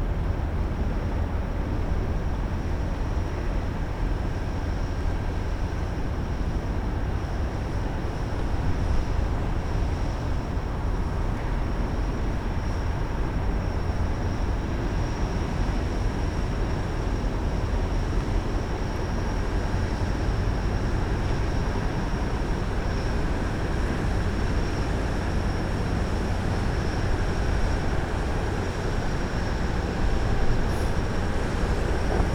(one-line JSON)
{"title": "Hafen, Braunschweig, Deutschland - 2 Minuten Hafen/Kanal", "date": "2013-04-15 16:00:00", "description": "Braunschweiger Hafen, Mittellandkanal, Projekt: TiG - Theater im Glashaus: \"über Land und Mehr - Berichte von einer Expedition zu den Grenzen des Bekannten\". TiG - Theater im Glashaus macht sich 2013 auf zu Expeditionen in die Stadt, um das Fremde im Bekannten und das Bekannte im Fremden zu entdecken. TiG, seit 2001 Theater der Lebenshilfe Braunschweig, ist eine Gruppe von Künstlerinnen und Künstlern mit unterschiedlichen Kompetenzen, die professionell erarbeitete Theaterstücke, Performances, Musik und Videofilme entwickelt.", "latitude": "52.32", "longitude": "10.48", "altitude": "65", "timezone": "Europe/Berlin"}